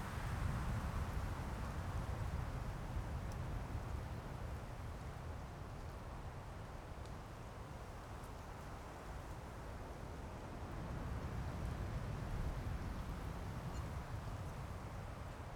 Casuarinaceae, The sound of the wind moving the leaves, Sound of the waves, Zoom H6 M/S